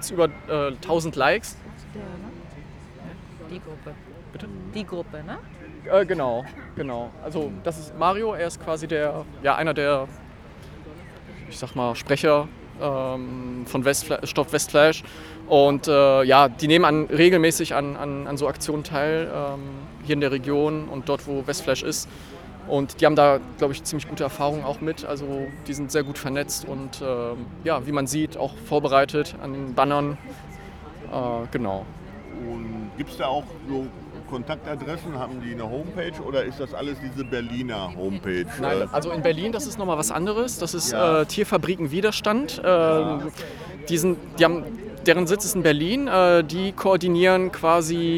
Während der Interviewaufnahmen müssen die Sprecher*innen immer wieder pausieren wenn gerade wieder ein Laster mit 200 Schweinen in das Werksgelände einbiegt. Eindringliche Vergegenwärtigung des Ausmasses des Tierschlachtens, dass so der Plan, noch um mehr als das Dreifache anwachsen soll.
Nordrhein-Westfalen, Deutschland, 4 June, 11:45